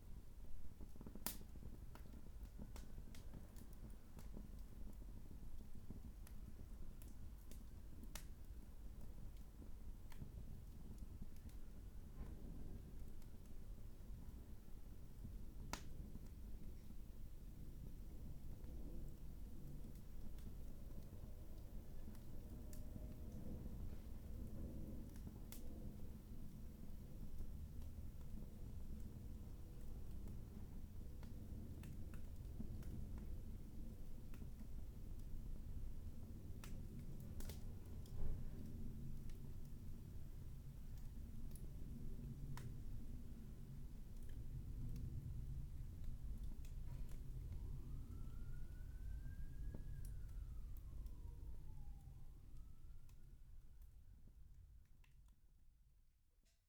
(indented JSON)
{
  "title": "My Dining Room, Reading, UK - lighting a fire",
  "date": "2015-01-26 13:24:00",
  "description": "The first time a fire has been lit in the dining room for a few years; it was a bit smoky but very nice to see flames in the grate and to feel briefly connected to the old soundscape of this house - the pre-central-heating soundscape. Mixed with 2015 police sirens.",
  "latitude": "51.44",
  "longitude": "-0.97",
  "altitude": "55",
  "timezone": "Europe/London"
}